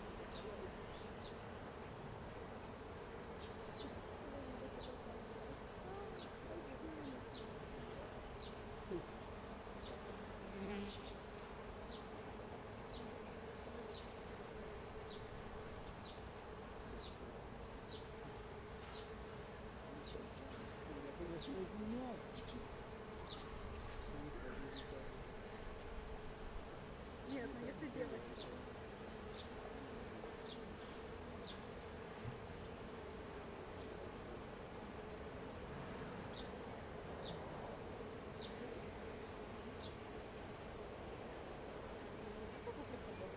узвіз Бузький, Вінниця, Вінницька область, Україна - Alley12,7sound12childrenandbees
Ukraine / Vinnytsia / project Alley 12,7 / sound #12 / children and bees